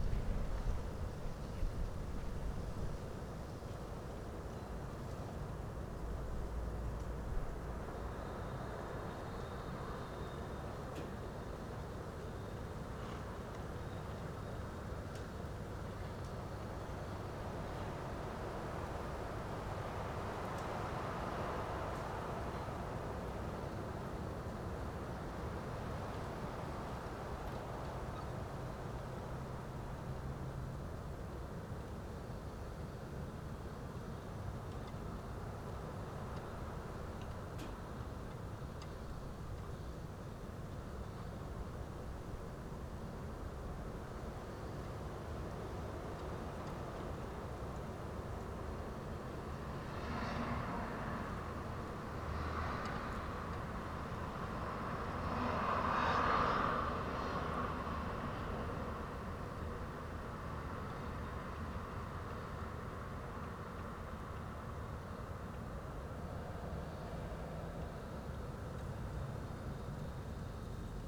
remscheid: johann-sebastian-bach-straße - the city, the country & me: on the rooftop
stormy night, mic on the rooftop
the city, the country & me: march 27, 2014
Remscheid, Germany